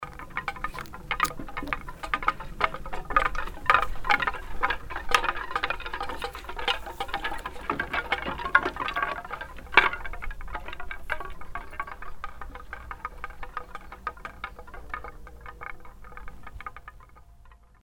hoscheid, sound sculpture, tontotem
a second recording of the same location and sound object.
Eine zweite Aufnahme von demselben Ort und Klangobjekt.
Un deuxième enregistrement du même objet au même endroit.
Projekt - Klangraum Our - topographic field recordings, sound sculptures and social ambiences